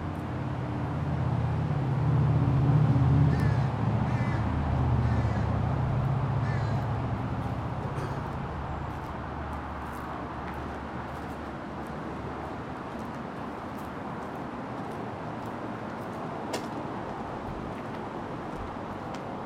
Woodland Park, Seattle WA
Part two of soundwalk in Woodland Park for World Listening Day in Seattle Washington.